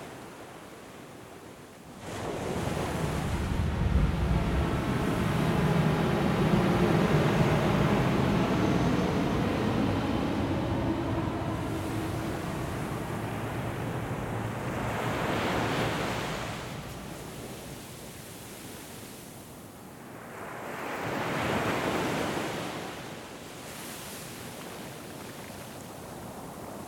Faro, Portugal - Faro-Plage
Faro - Portugal
Ambiance plage
6 October 2018, 15:30